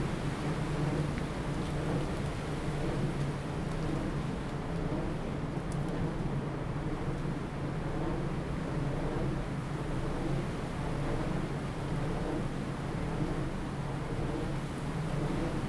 Zhunan Township, Miaoli County - In the woods
In the woods, wind, Wind Turbines, Zoom H2n MS+XY
30 August 2017, ~12:00